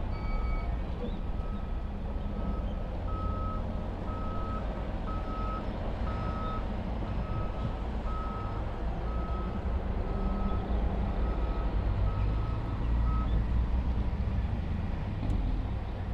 neoscenes: birds and machines at transfer station
AZ, USA, 25 September 2008